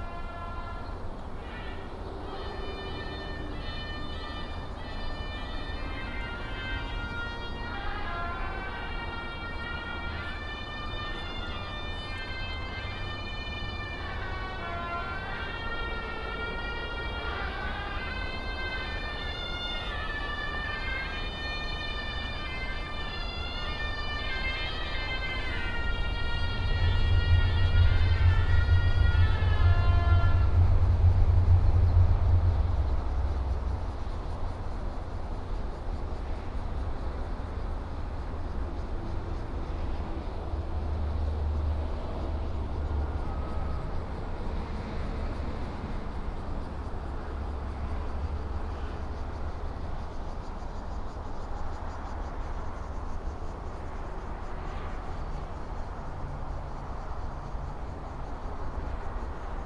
仁和步道, Hukou Township, Hsinchu County - Under the high speed railway
Under the high speed railway, traffic sound, An old man practicing playing the suona below the track